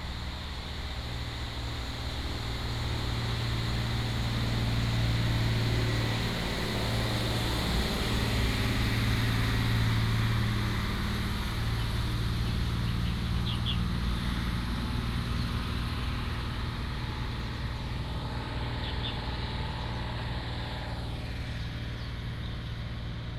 Nangan Township, Taiwan - Birds singing
Birds singing, Traffic Sound, Abandoned military base
福建省 (Fujian), Mainland - Taiwan Border